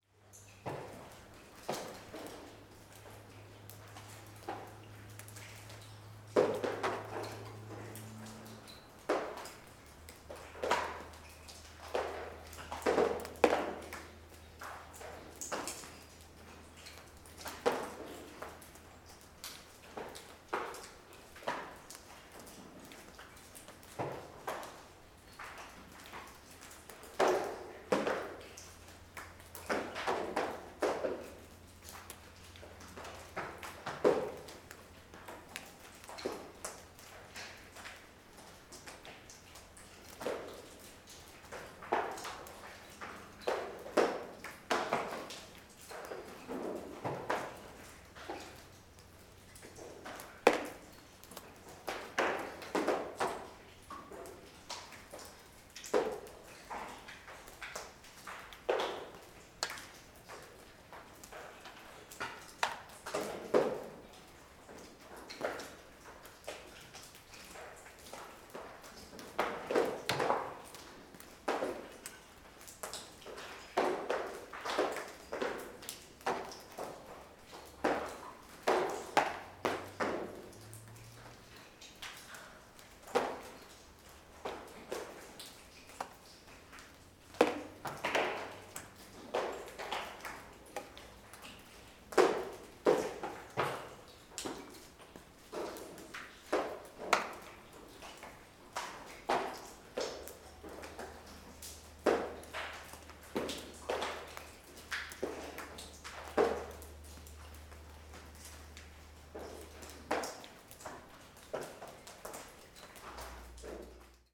Drips in the farm ruins, south Estonia
visiting the ruins after a rainy day